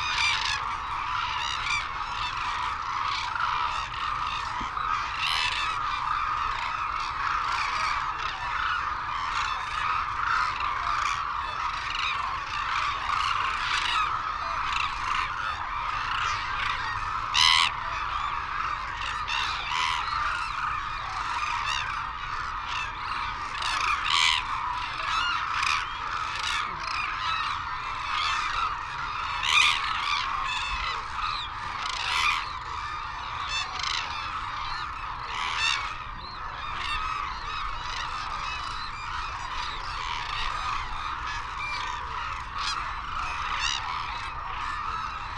Arasaki Crane Centre ... Izumi ... calls and flight calls from white naped cranes and hooded cranes ... cold windy sunny ... background noise ... Telinga ProDAT 5 to Sony Minidisk ... wheezing whistles from youngsters ...